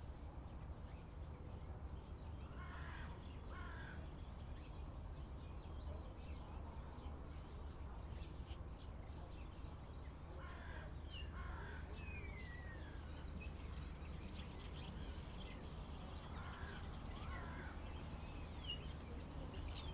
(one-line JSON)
{"title": "Berlin Wall of Sound, birds at Waltersdorfer Chaussee 080909", "latitude": "52.40", "longitude": "13.51", "altitude": "43", "timezone": "Europe/Berlin"}